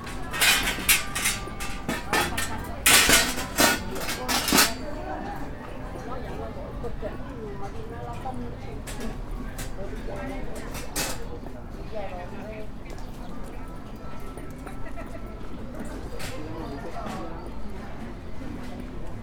Jardín Allende, Obregon, León, Gto., Mexico - Mercado tianguis del Barrio o centro comercial Allende y jardín.
Walking around the market, tianguis and plaza Jardín Allende.
It was a Tuesday, day that the tianguis is working together to the market that works every day.
There are many businesses like a tortilla, butcher, fruit shops, and also clothes, stamen, dolls stands, and people. And much more.
I made this recording on February 18th, 2020, at 2:38 p.m.
I used a Tascam DR-05X with its built-in microphones and a Tascam WS-11 windshield.
Original Recording:
Type: Stereo
Paseando por el mercado, tianguis y plaza de Jardín Allende.
Fue un martes, día en que el tianguis está trabajando junto al mercado que está todos los días.
Hay muchos negocios como tortillería, carnicería, frutería, y también puestos de ropa, de muñecas, de estambre y mucha gente. Y mucho más.
Esta grabación la hice el 18 de febrero 2020 a las 14:38 horas.